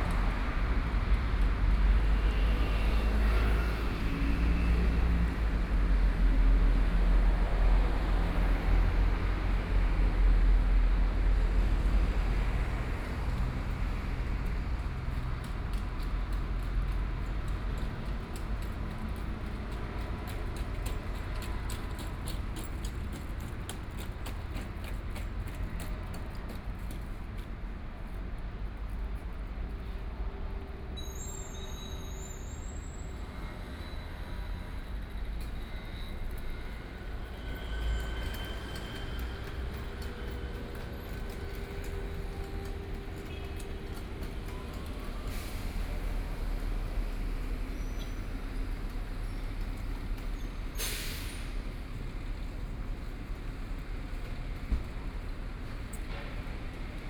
Xinyi Road, Taipei - Traffic Sound
Traffic Sound, Binaural recordings, Zoom H6+ Soundman OKM II
Taipei City, Taiwan, 10 January 2014, 14:54